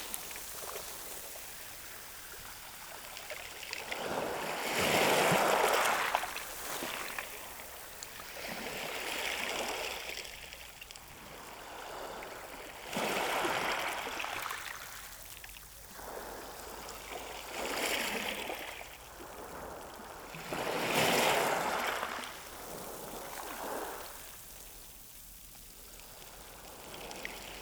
{
  "title": "Criel-sur-Mer, France - The sea at Criel beach",
  "date": "2017-11-03 11:30:00",
  "description": "Sound of the sea, with waves lapping on the pebbles, at the quiet Criel beach during the low tide.",
  "latitude": "50.04",
  "longitude": "1.32",
  "altitude": "2",
  "timezone": "Europe/Paris"
}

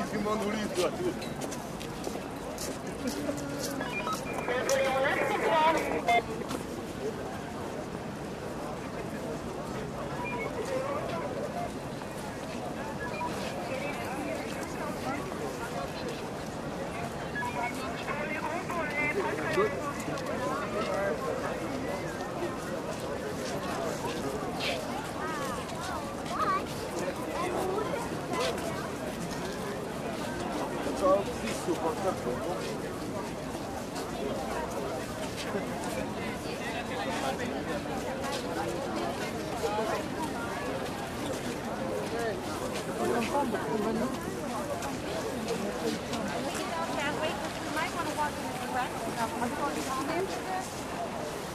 {
  "title": "Paris, the Louvre, waiting queue",
  "date": "2010-12-30 16:40:00",
  "description": "Passing the queue which lines up for the ticket counter for the museums of the Louvre, there appears a wide range of different languages amidst the waiting tongues. Language learning means, at first, listening. Lost efforts, if you try here, but a bewildering phonetic scene.",
  "latitude": "48.86",
  "longitude": "2.34",
  "altitude": "44",
  "timezone": "Europe/Paris"
}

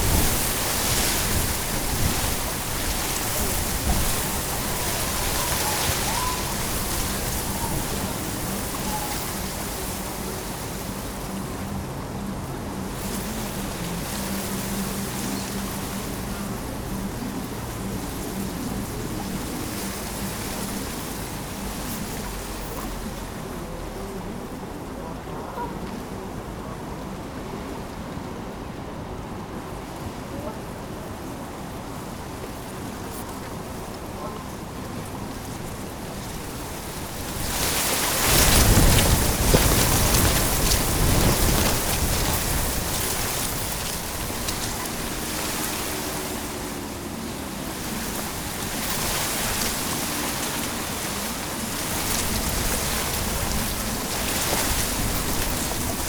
{
  "title": "Quartier des Bruyères, Ottignies-Louvain-la-Neuve, Belgique - Reeds",
  "date": "2016-07-10 16:00:00",
  "description": "Wind in the reeds, near the Louvain-La-Neuve lake.",
  "latitude": "50.67",
  "longitude": "4.61",
  "altitude": "103",
  "timezone": "Europe/Brussels"
}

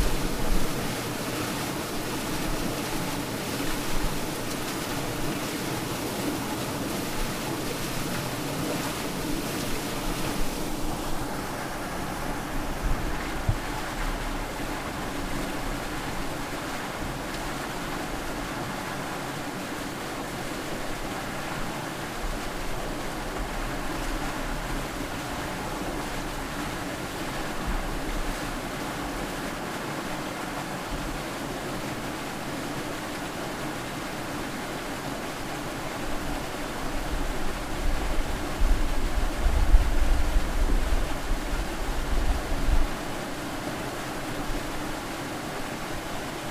{
  "title": "Trehörningsjö, utanför vattenkvarnen - Outside the watermill",
  "date": "2010-07-18 19:50:00",
  "description": "Outside the old watermills inlet of the waterstream. Recording from soundwalk during World Listening Day, 18th july 2010.",
  "latitude": "63.69",
  "longitude": "18.84",
  "altitude": "174",
  "timezone": "Europe/Stockholm"
}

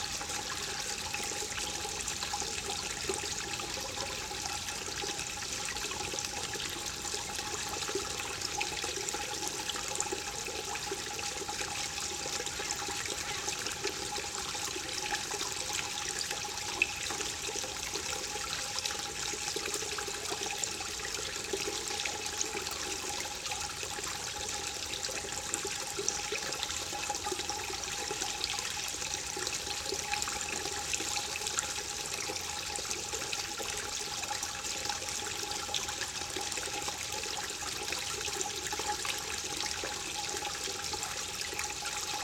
{
  "title": "Unnamed Road, Lithuania, pipe under the road",
  "date": "2020-12-22 15:40:00",
  "description": "big water pipe under the road",
  "latitude": "55.48",
  "longitude": "25.65",
  "altitude": "142",
  "timezone": "Europe/Vilnius"
}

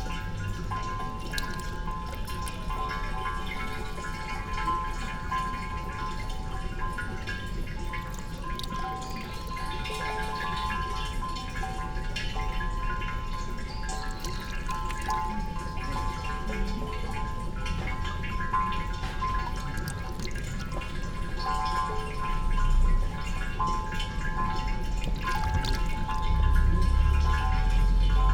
{
  "title": "Taizoin, zen garden, Kyoto - suikinkutsu",
  "date": "2014-11-04 13:02:00",
  "latitude": "35.02",
  "longitude": "135.72",
  "altitude": "53",
  "timezone": "Asia/Tokyo"
}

